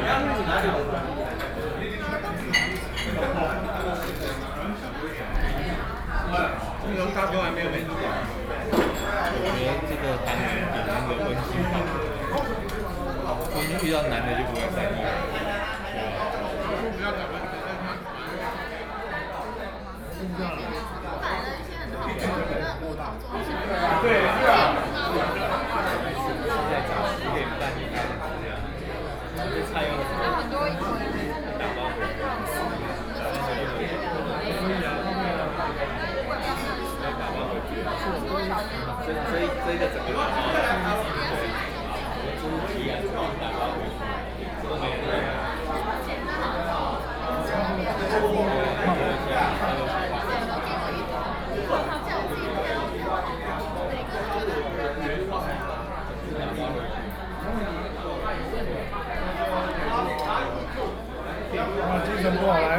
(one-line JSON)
{"title": "Ami Hotel, Taipei - Dine together", "date": "2013-06-29 18:55:00", "description": "Artists from different countries are dinner, Sony PCM D50 + Soundman OKM II", "latitude": "25.06", "longitude": "121.53", "altitude": "10", "timezone": "Asia/Taipei"}